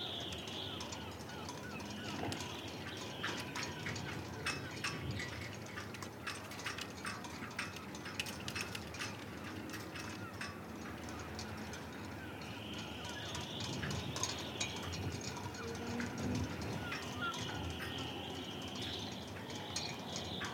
Rye Harbour, Icklesham, East Sussex, UK - Masts and sail cables blowing in the wind
This is the sound of sail cables clanging against masts at Rye Harbour. It was one of those super cold but bright, brisk days and the wind was up. You can hear little devices on the masts - clips and d-hooks etc. - being battered about, and some stuff on the floor being moved by the wind. It took me a while to find a nook where the wind wasn't going directly through my windshield and onto the mics but eventually I found a little place where I could lean in and somehow shelter the EDIROL R-09 from the worst of the gusts. It's still a windy recording, but then it was a windy day. I could have stood and listened for hours.
1 February 2015, ~12:00